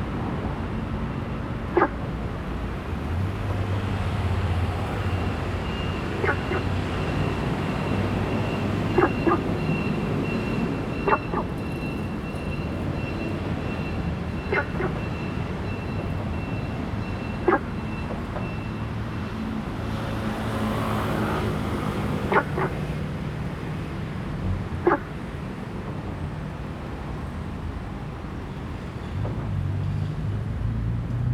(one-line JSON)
{"title": "大學公園, Taipei City - Traffic and Frog sound", "date": "2015-07-02 20:10:00", "description": "Traffic Sound, Frog sound, in the park\nZoom H2n MS+XY", "latitude": "25.02", "longitude": "121.53", "altitude": "16", "timezone": "Asia/Taipei"}